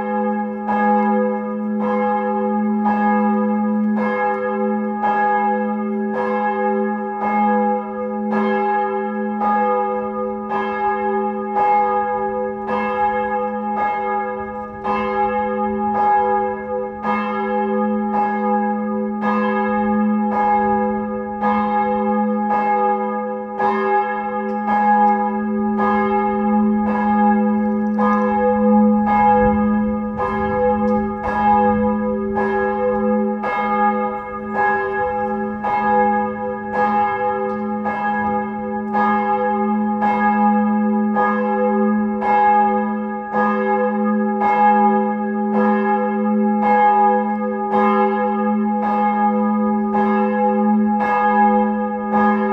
Bells from the church at 12:00 on Sunday, recorded from the street.
Light wind sometimes.
Church Kostel sc. Vojtecha, Prague 1
Recorded by an ORTF setup Schoeps CCM4 x 2 on a Cinela Suspension + Windscreen
Sound Devices mixpre6 recorder
GPS: 50.078476 / 14.415440
Sound Ref: CZ-190303-002
Recorded during a residency at Agosto Foundation in March 2019